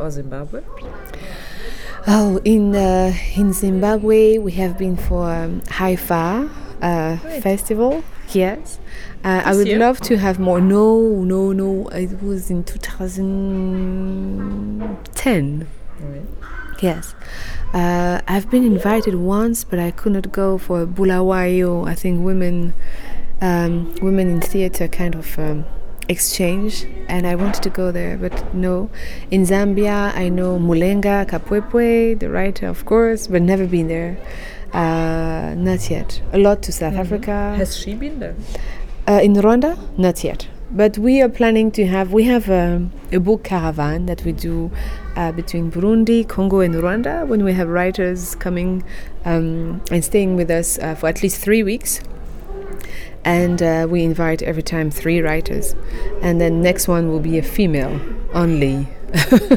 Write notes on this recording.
Carole talks about her encounter with African women artists in South Africa, Kenya, Zambia, Zimbabwe… learning from each other and from history. She adds the story, of how they got to be at the Children’s Theatre Festival in Hamm now and her fist encounters with young audiences here… Carole’s entire footage interview is archived here: